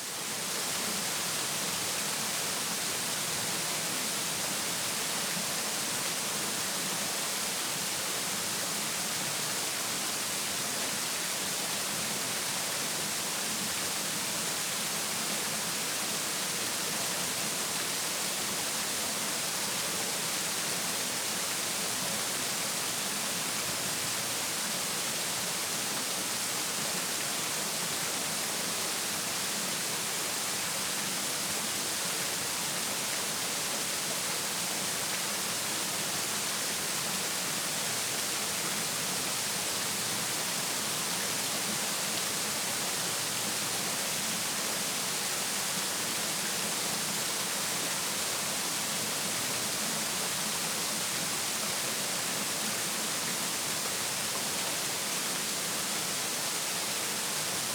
Walking Holme Bilberry Sinkhole
Hovering in the centre of the sinkhole.
Kirklees, UK, 19 April 2011, 11:30